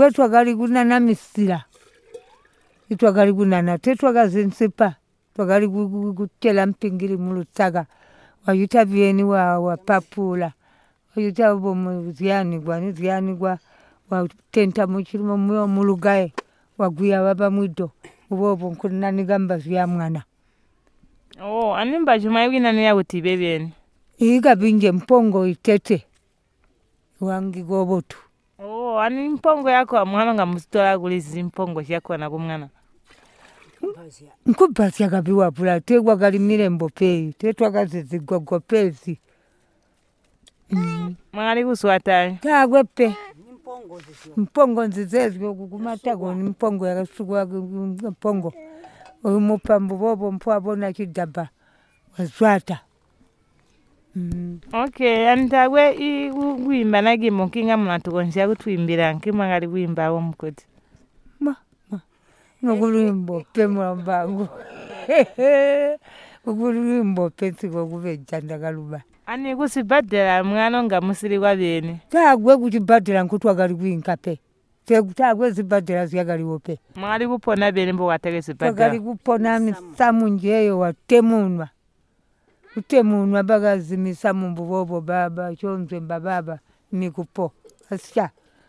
Janet Muleya, an elder of Chibondo village, responds to Margaret’s questions about bush fruits and local trees and how they used to cook and prepare the leaves or roots as dishes. Margaret asks her for a song, but she declines…